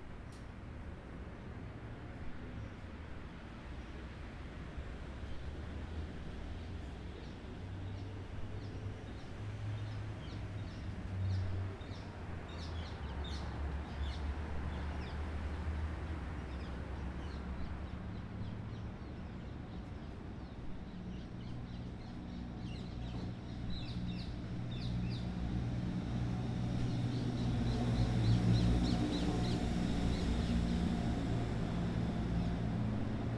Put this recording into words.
early morning iun suburbian Amastelveen bird in the Handkerchief tree